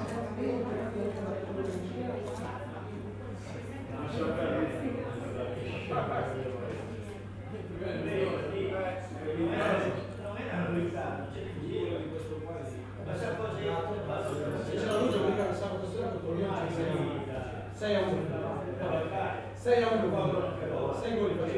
Bar am Schiffsteg in Luino
Schiffsteg, Luino, Bar, Prosecco, Auguri, bon anno, Fussballgeplauder, amici, vino bianco, vino rosso, gelato, bon caffè
Luino Varese, Italien